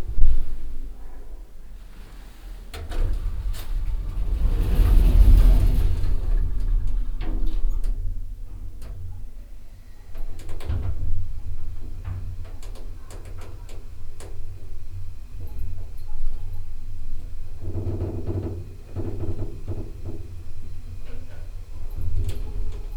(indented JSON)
{
  "title": "lippstadt, lippischer hof, elevator - lippstadt, lippischer hof, elevator 03",
  "date": "2009-09-29 19:12:00",
  "description": "the fascinating and spooky sound of a hotel elevator driving down\nsoundmap nrw - social ambiences and topographic field recordings2",
  "latitude": "51.68",
  "longitude": "8.34",
  "altitude": "79",
  "timezone": "Europe/Berlin"
}